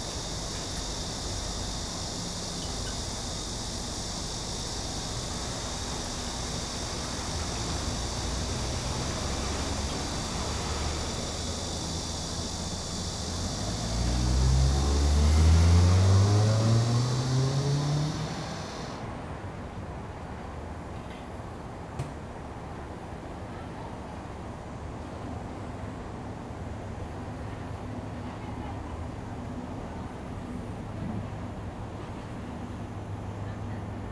Bitan Rd., 新店區, New Taipei City - Hot weather
Cicadas cry, Bird calls, Traffic Sound
Zoom H2n MS+ XY
New Taipei City, Taiwan, 1 August 2015, ~15:00